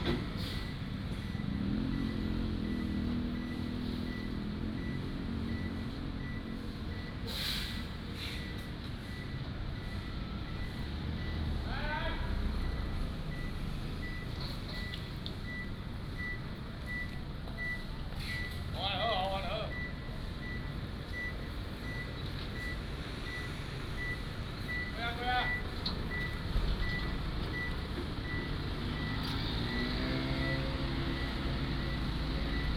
Beichen St., Magong City - Garbage truck
Garbage truck, Next to the market
Magong City, Penghu County, Taiwan, 21 October, ~13:00